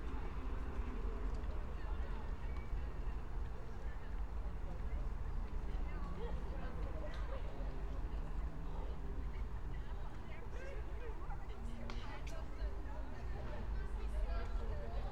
Brno, Lužánky - park ambience
11:33 Brno, Lužánky
(remote microphone: AOM5024/ IQAudio/ RasPi2)